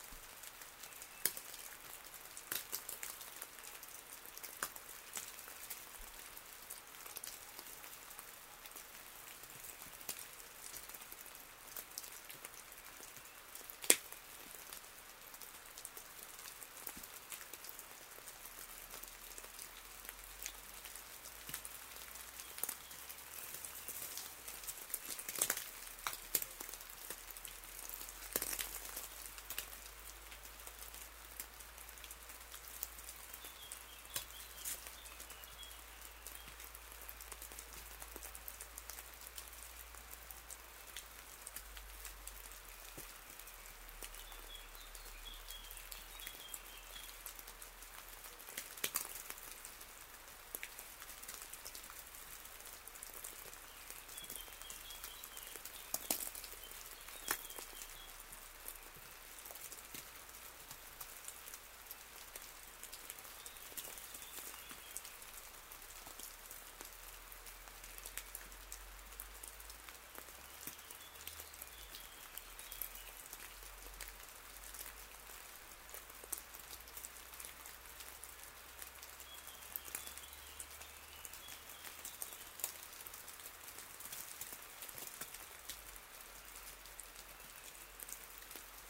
Le Fau, France - A long night of snowfall, sleet and hail
During a long night and an early morning, a shower of snow falls on a small hamlet named Le Fau, in the Cantal mountains. We have to wait very long time before continuing the hike. Recorded at the end of the night, near the cheese factory of Jacques Lesmarie.